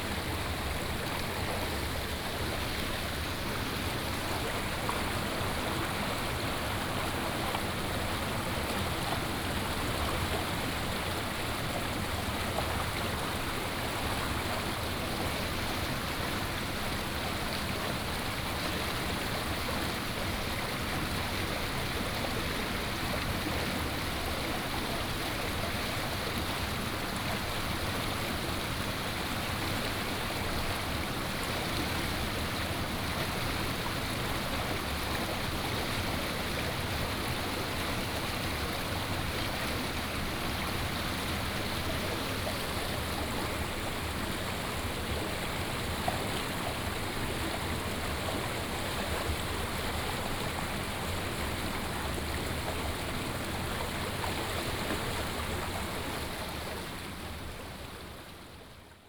泰和橫堤路閘, Taimali Township, Taitung County - Agricultural irrigation channel
Agricultural irrigation channel, Water sound
Binaural recordings, Sony PCM D100+ Soundman OKM II